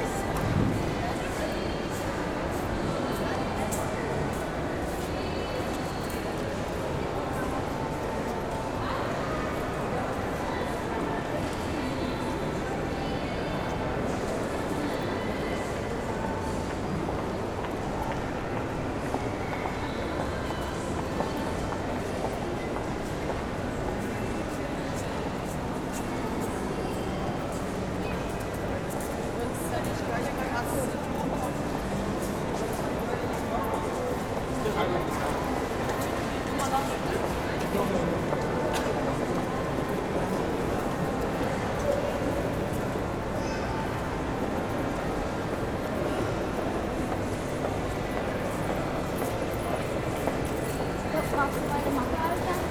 berlin, grunerstraße: einkaufszentrum - the city, the country & me: shopping centre
entrance hall of the alexa shopping centre
the city, the country & me: march 14, 2011
Berlin, Germany, 14 March 2011